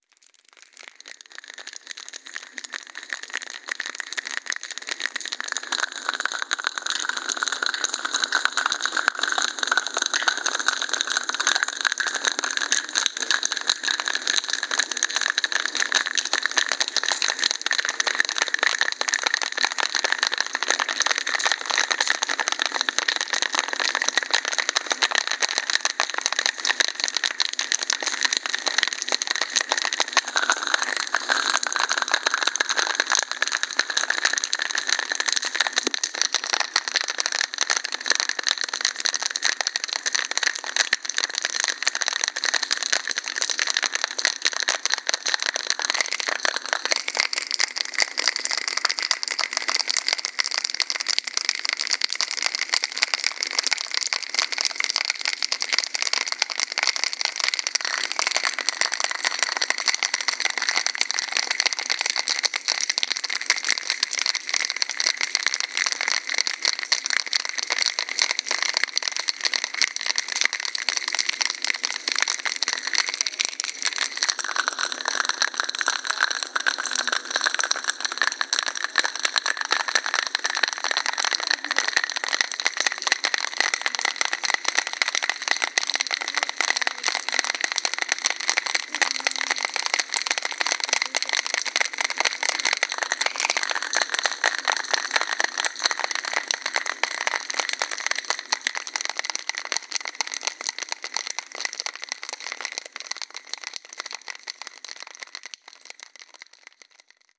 Bogart Hall, Ithaca, NY, USA - Icicle Drip (Omindirectional)
Dripping Icicles by Bogart Hall. Recorded with an Omnidirectional mic that was shielded from the water by cold hands.